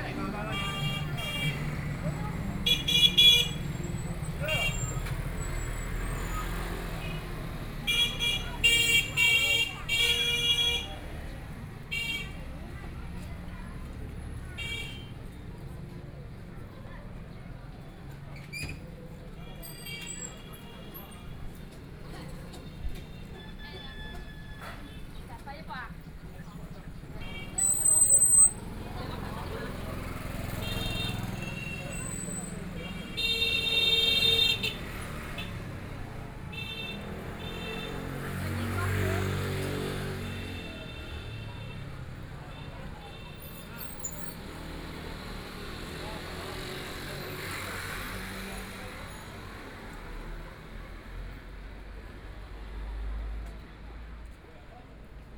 Hongkou District, Shanghai - walking in the Street
Walking along the street, The crowd and the sound of the store, Traffic Sound, Zoom H6+ Soundman OKM II
23 November, 12:16